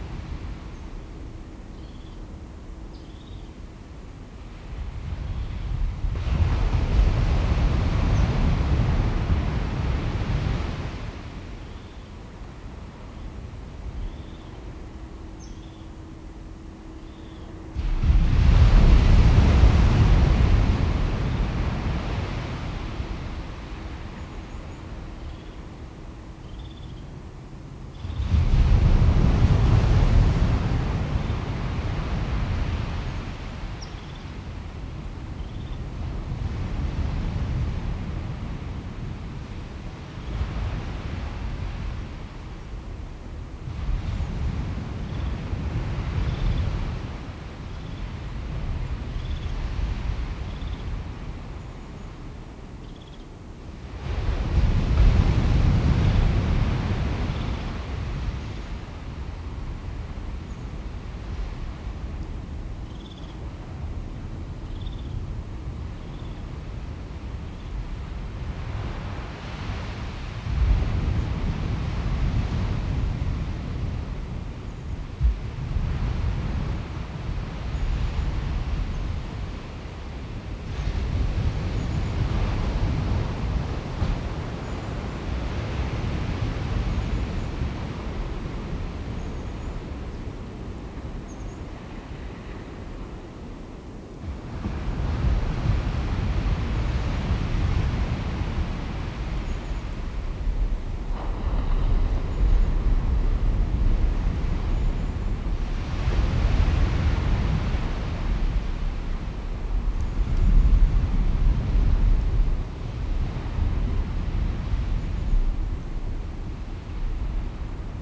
Rúa do Coto, Cangas, Pontevedra, Spain - Forest by the Sea, Praia de Barra
Recorded with a pair of DPA 4060s and a Marantz PDM661
16 December 2017, 15:35